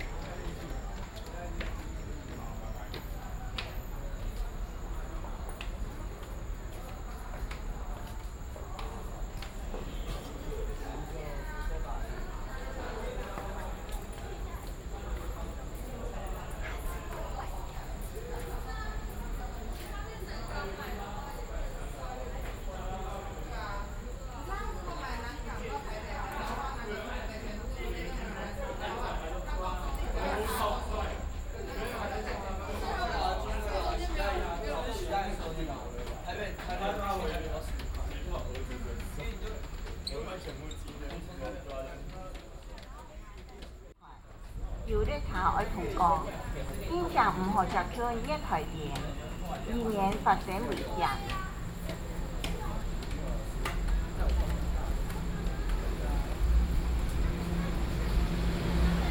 Railway platforms, Train traveling through, Station broadcasting, Sony PCM D50 + Soundman OKM II
桃園縣, 中華民國